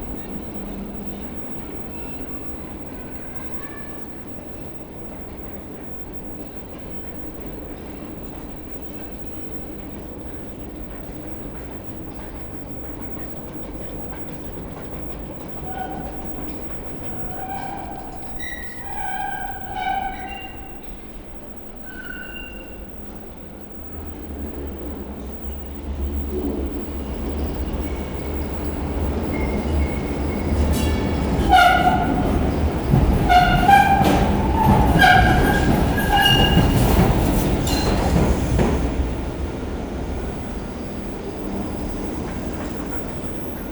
Charleroi, Belgique - Charleroi Waterloo metro station
Recording of the worrisome Waterloo tramway station in Charleroi. There's nearly nobody excerpt some beggars sleeping. Tramways make harsh sounds because the tracks are curve.
15 December, 13:30